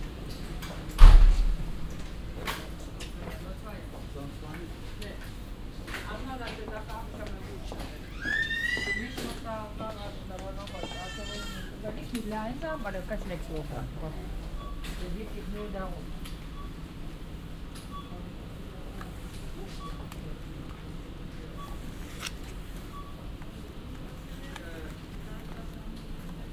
refrath, siebenmorgen, drogeriemarkt
morgens im drogeriemarkt, einkaufswagen, kundengespäche, schritte, kinderschreien, das piepen der kasse
soundmap nrw - social ambiences